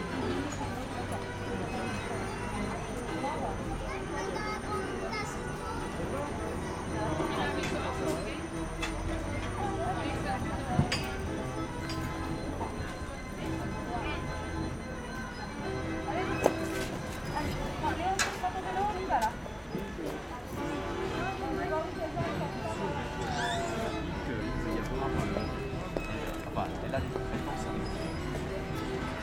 Place Gutenberg, Strasbourg, Frankreich - carousel
the old children's carousel with music and passers-by, parents and their children, ringing when the ride starts and ambient noise
zoom h6